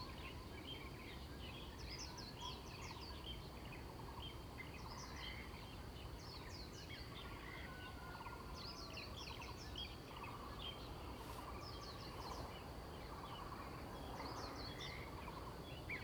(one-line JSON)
{"title": "種瓜路, 桃米里 Puli Township - In the morning", "date": "2016-05-06 06:06:00", "description": "Birds called, Chicken sounds\nZoom H2n MS+XY", "latitude": "23.95", "longitude": "120.92", "altitude": "616", "timezone": "Asia/Taipei"}